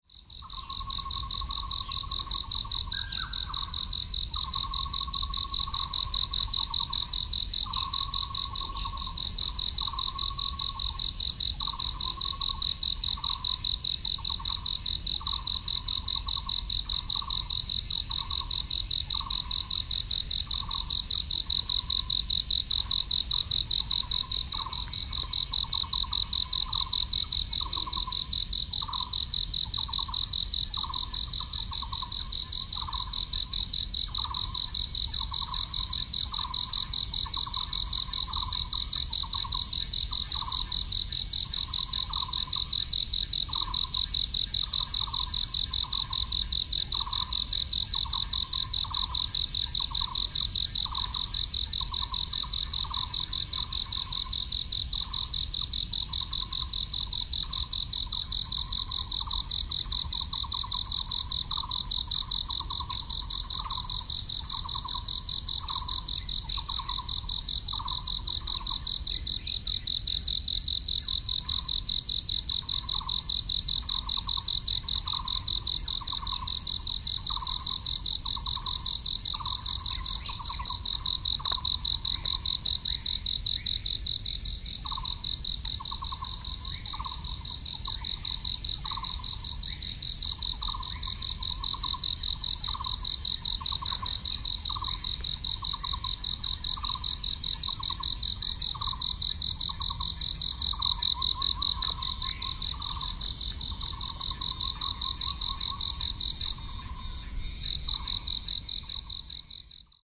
Xizhi District, New Taipei City - Natural environmental sounds
Birds, Insects, The frogs, Binaural recordings